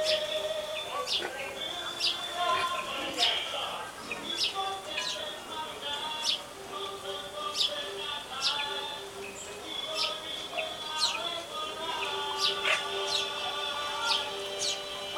Santiago de Cuba, patio con macho